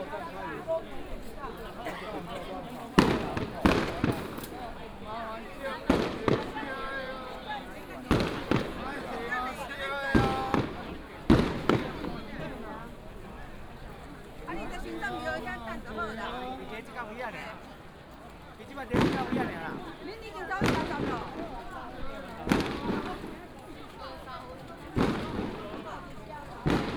Baishatun, 苗栗縣通霄鎮 - Mazu Pilgrimage activity
Firecrackers and fireworks, Many people gathered in the street, Baishatun Matsu Pilgrimage Procession, Mazu Pilgrimage activity
9 March 2017, 09:45